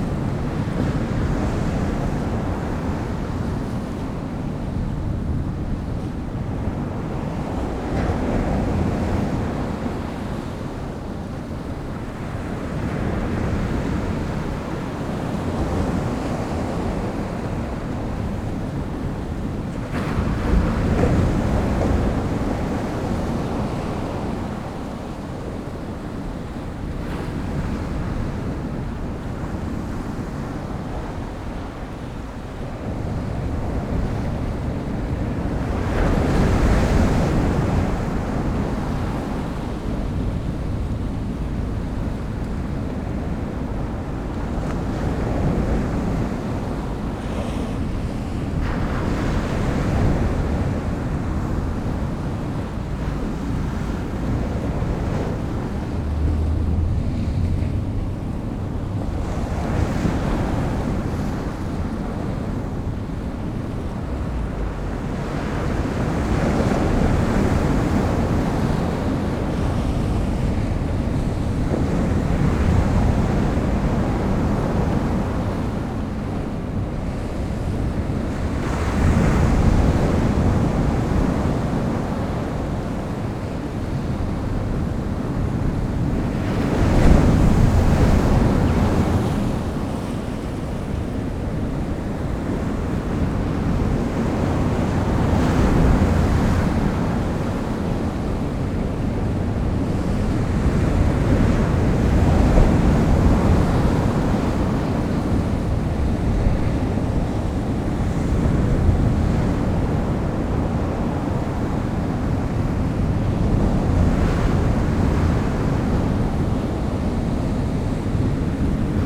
Bamburgh Lighthouse, The Wynding, Bamburgh, UK - incoming tide ...
incoming tide ... lavalier mics clipped to a bag ... in the lee of wall ... blowing a hooley ...
7 November 2018, 12:15